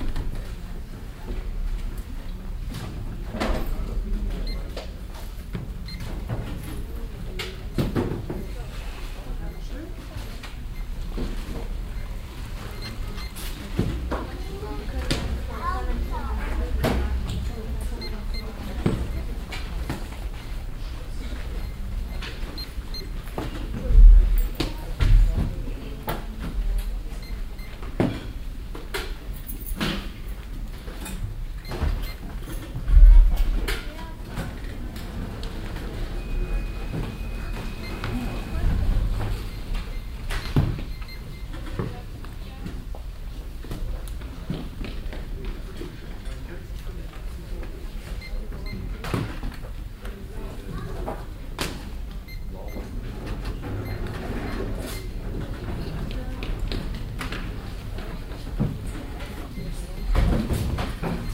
cologne, josef-haubricht hof, stadtbuecherei - cologne, josef-haubricht hof, stadtbuecherei
soundmap: köln/ nrw
zentrale stadtbücherei am josef haubricht hof - eingangshalle - ausleihe
project: social ambiences/ listen to the people - in & outdoor nearfield recordings